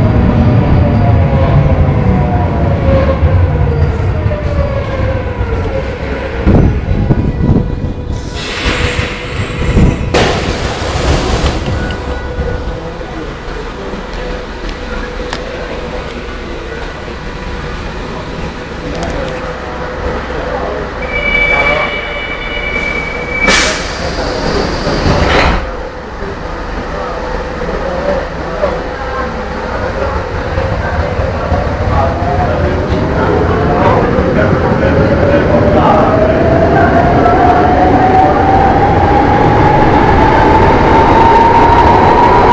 Napoli. Metro, Linea 1, stazione Museo.
Subway in Napoli, line 1. From Museo to Materdei stations.
Naples, Italy, 12 August 2010